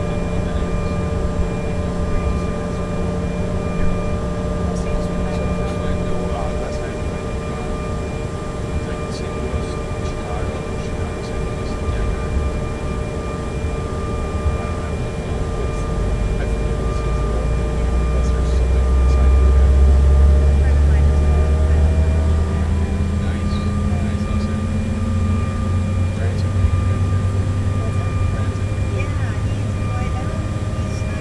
neoscenes: enroute over the Rockies
Grant, CO, USA, 22 February 2010, ~12:00